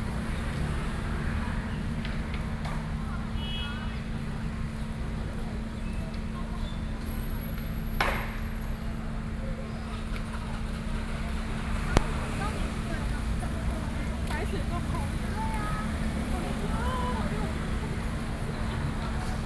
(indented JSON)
{
  "title": "Chiayi - Skateboarding youth",
  "date": "2013-07-26 17:51:00",
  "description": "in the Park, Skateboarding youth, Sony PCM D50 + Soundman OKM II",
  "latitude": "23.48",
  "longitude": "120.45",
  "altitude": "38",
  "timezone": "Asia/Taipei"
}